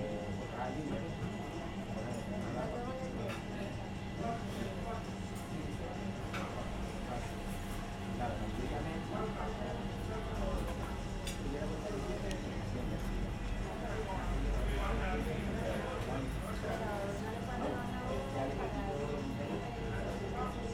Algeciras, café in the morning
Algeciras, Spain, 3 April 2011, 11:00